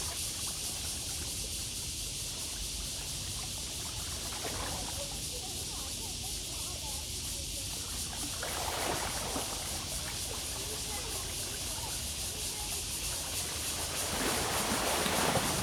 Ln., Zhongzheng Rd., Tamsui Dist. - On the river bank
On the river bank, Acoustic wave water, Cicadas cry, There are boats on the river
Zoom H2n MS+XY
New Taipei City, Taiwan, 18 July 2015